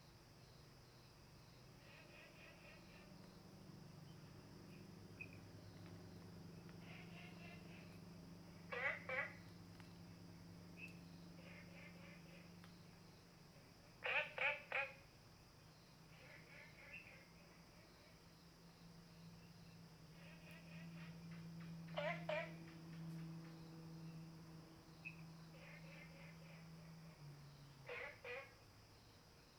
綠屋民宿, Nantou County - Frogs chirping
Frogs chirping, at the Hostel
Zoom H2n MS+XY
Nantou County, Taiwan, April 2015